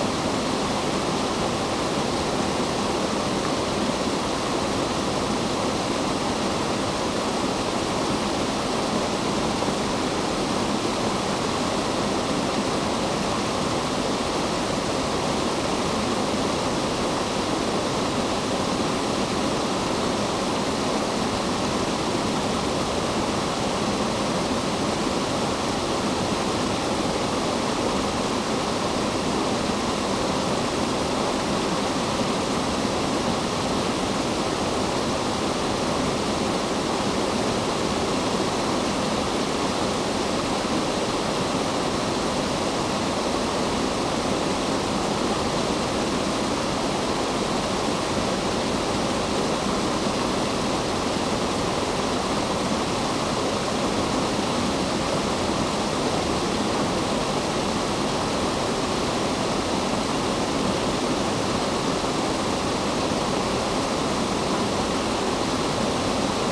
Lindrick with Studley Royal and Fountains, UK - The Cascade ...
The Cascade ... Studley Royal Water Gardens ... lavalier mics clipped to sandwich box ... warm sunny afternoon ... distant Canada geese ...
Ripon, UK, 15 September